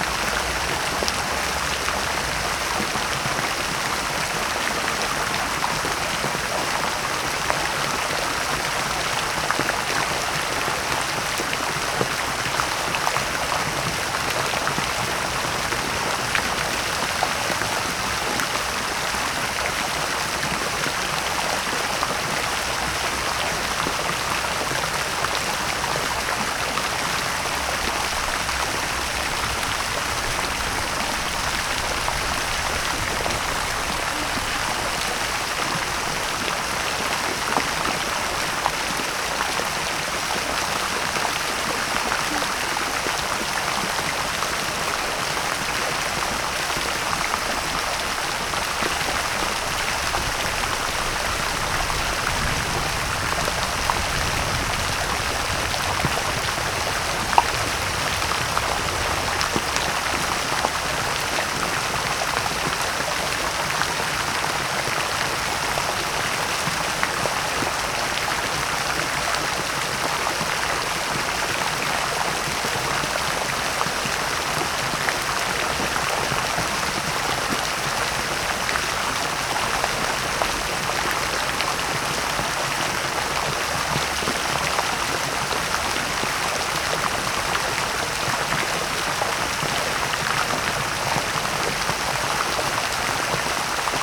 Śródmieście Północne, Warszawa - Fontanna Kinoteka
8b Fontanna Kinoteka w Palac Kultury i Nauki, Plac Defilad, Warszawa